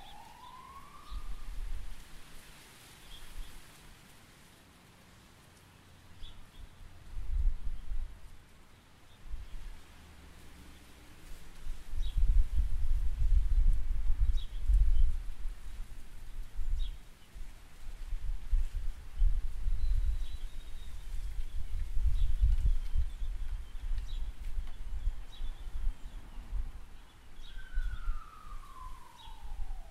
Hakanoa St, Grey Lynn, Auckland
backyard, birds, sirens, wind.